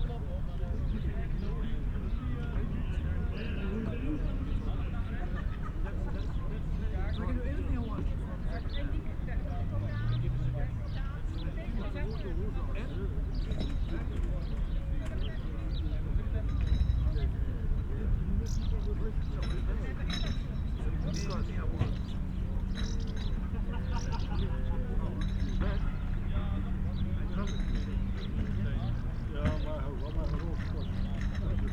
urk: staverse kade - the city, the country & me: beach opposite industrial harbour
evening ambience
the city, the country & me: june 11, 2013